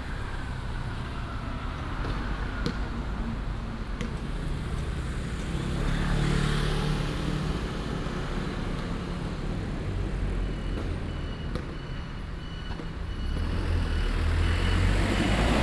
In the corner of the basketball court, traffic sound, Binaural recordings, Sony PCM D100+ Soundman OKM II